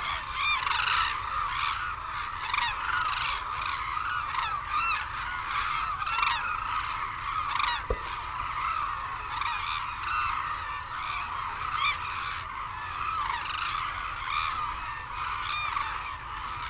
Sho, Izumi, Kagoshima Prefecture, Japan - Crane soundscape ...
Arasaki Crane Centre ... Izumi ... calls and flight calls from white naped cranes and hooded cranes ... cold windy sunny ... background noise ... Telinga ProDAT 5 to Sony Minidisk ... wheezing whistles from young birds ...
Izumi-shi, Kagoshima-ken, Japan, 18 February 2008